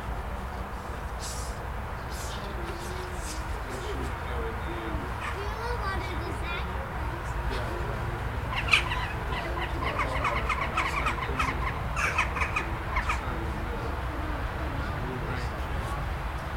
Stonehenge, Amesbury, UK - 048 In Stonehenge
Salisbury, UK